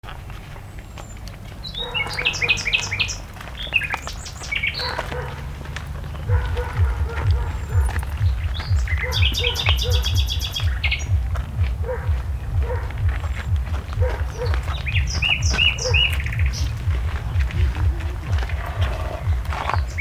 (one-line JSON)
{"title": "via San Carlo, Poglianasca Arluno (Milan), usignolo, cascina Poglianasca", "date": "2011-06-19 16:14:00", "description": "Usignolo alla cascina Poglianasca", "latitude": "45.52", "longitude": "8.96", "altitude": "165", "timezone": "Europe/Rome"}